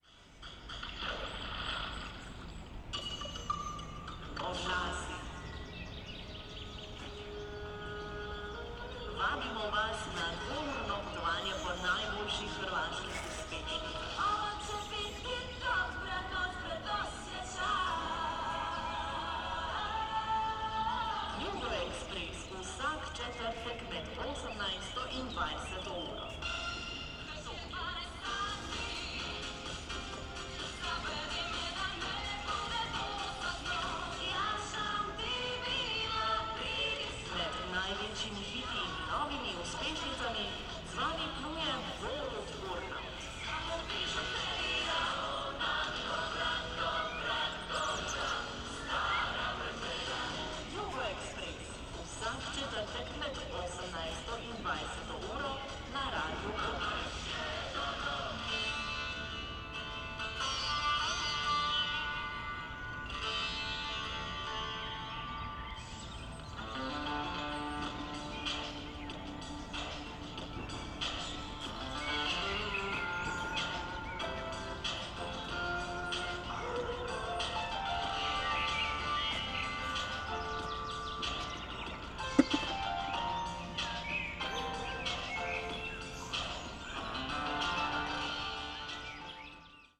Maribor, Mariborski Otok - radio in pool
workers are preparing the empty swimming pools on Maribor island for the summer season, the radio is running.
(SD702 AT BP4025)